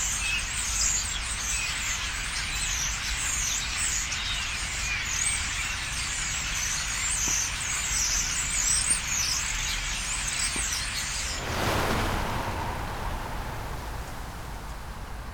Tempelhofer Feld, Columbiadamm, Berlin - a flock of starlings (Sturnus vulgaris) in a tree
attracted by a flock of starlings (Sturnus vulgaris) in a tree chatting, at 2:50 they'll be gone in a rush. Further, sounds of a nearby tennis match and traffic noise from Columbiadamm
(Sony PCM D50)